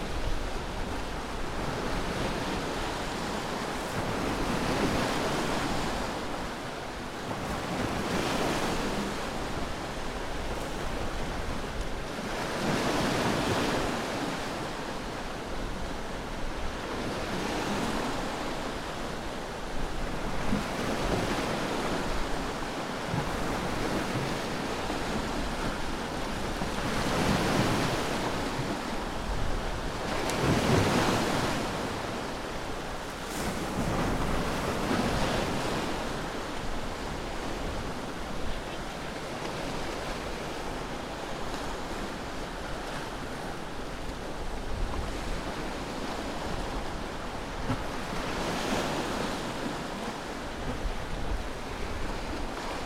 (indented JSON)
{
  "title": "Avinguda del Pantà, Sueca, Valencia, España - Mi Perelló",
  "date": "2020-08-17 20:52:00",
  "description": "Grabación en la zona de la entrada al puerto en El Perelló en una zona rocosa cerca de unos faros mientras comenzaba a atardecer.",
  "latitude": "39.28",
  "longitude": "-0.27",
  "altitude": "1",
  "timezone": "Europe/Madrid"
}